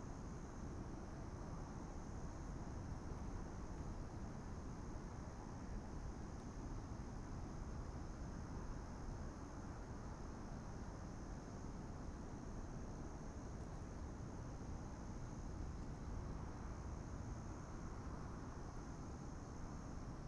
琵琶湖, Taitung - Lake night
The park at night, Duck calls, The distant sound of traffic and Sound of the waves, Zoom H6 M/S
Taitung City, Taitung County, Taiwan, 17 January 2014